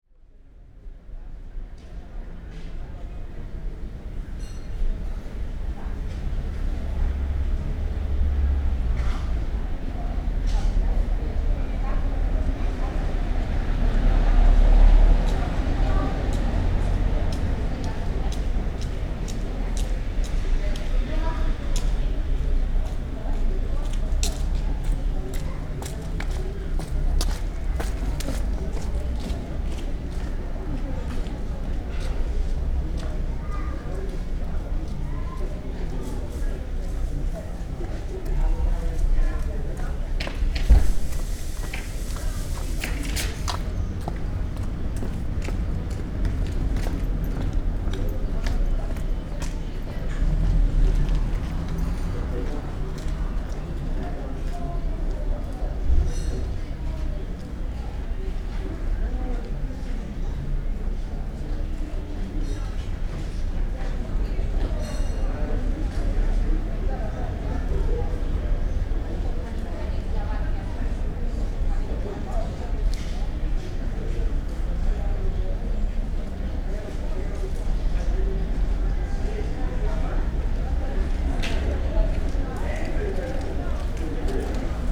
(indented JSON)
{
  "title": "Reszel, Poland, street ambience",
  "date": "2014-08-12 13:15:00",
  "description": "little street just before The Gothic St Peter's Church",
  "latitude": "54.05",
  "longitude": "21.15",
  "altitude": "119",
  "timezone": "Europe/Warsaw"
}